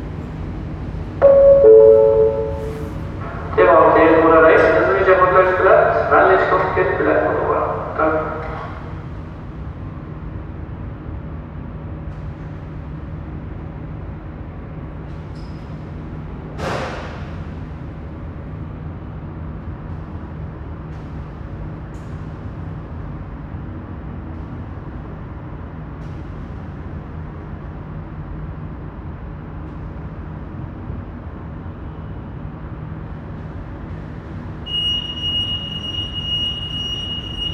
Fitjar, Norwegen - Storholmen, ferry departure
Inside the ferry from Storholmen to Hahljem after the start. A short announcement floowed by the engine sound and followed by a another norwegian annnouncement. Then car alarm signs initiated by the shaking of the boat.
international sound scapes - topographic field recordings and social ambiences